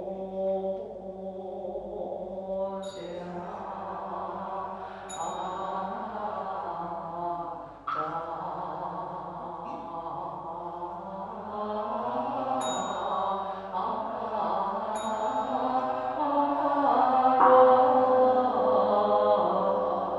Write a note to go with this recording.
Ackerstraße, Berlin - Beginning of the mass in Buddhist temple Fo-guang-shan. [I used an MD recorder with binaural microphones Soundman OKM II AVPOP A3]